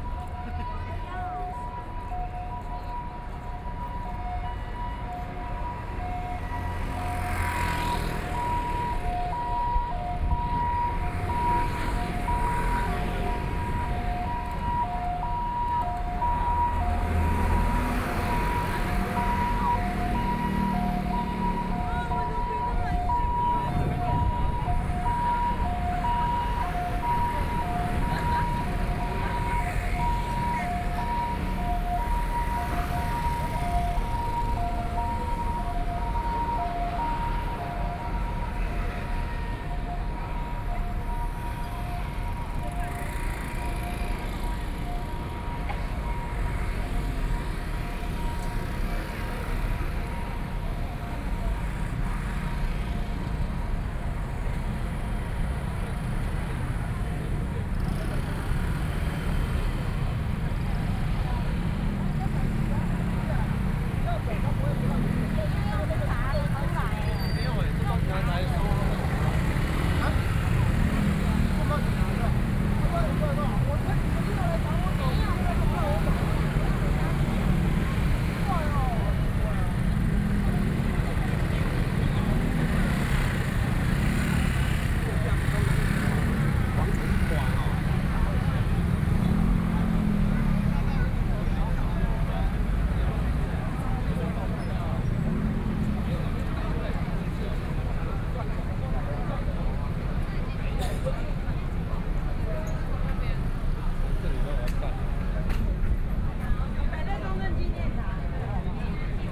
Zhongxiao E. Rd., Taipei City - protest
Packed with people on the roads to protest government